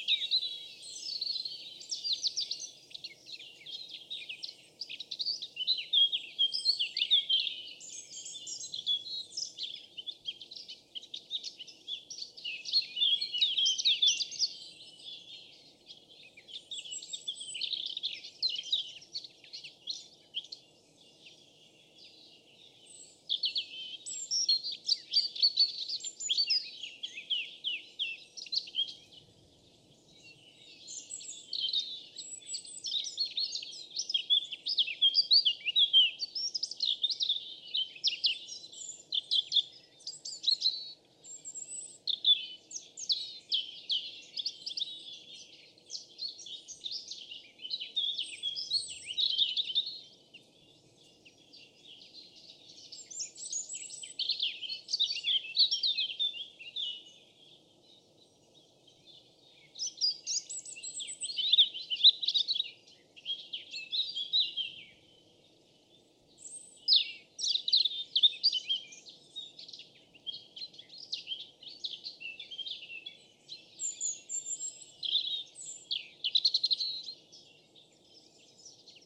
Monte Morello, Viale Giuseppe Pescetti, Sesto Fiorentino FI, Italia - Monte Morello
Soundscape recorded on a late winter afternoon in the woods of Monte Morello, a green area north of Florence.
The sunny and hot (considering the time of the year) day encourages different birds to sing and leave their sonic trace in this nice relaxing place.
Recorded with a Zoom H6 and Sennheiser MKE600.